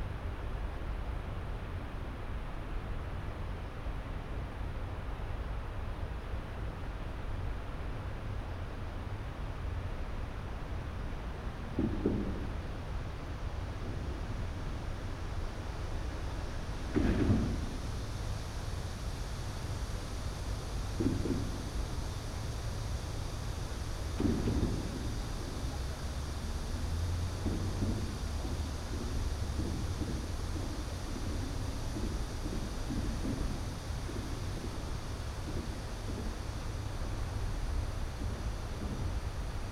대한민국 서울특별시 서초구 양재2동 233 여의교 - Yangjae Citizens Forest, Yeoui Bridge, Low Rattling noise
Yangjae Citizens Forest, Yeoui Bridge, Low putter sound at bridge underway